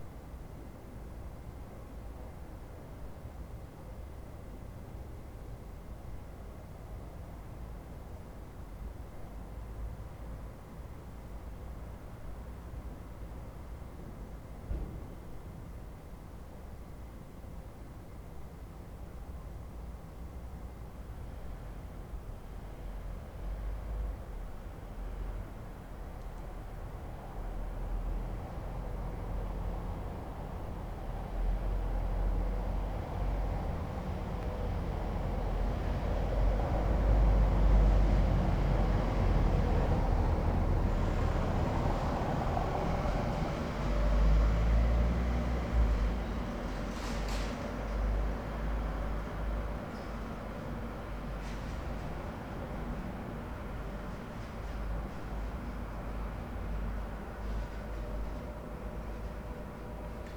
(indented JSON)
{
  "title": "berlin: friedelstraße - the city, the country & me: night traffic",
  "date": "2011-06-03 02:13:00",
  "description": "the city, the country & me: june 3, 2011",
  "latitude": "52.49",
  "longitude": "13.43",
  "altitude": "46",
  "timezone": "Europe/Berlin"
}